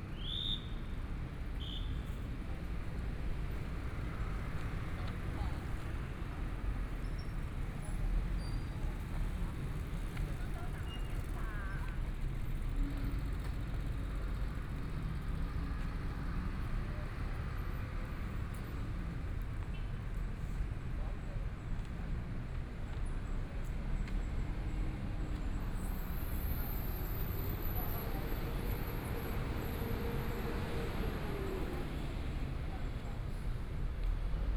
Tamsui Line, Taipei - Walking beneath the track
Walking beneath the track, from Minzu W. Rd. to Yuanshan Station, Binaural recordings, Zoom H4n+ Soundman OKM II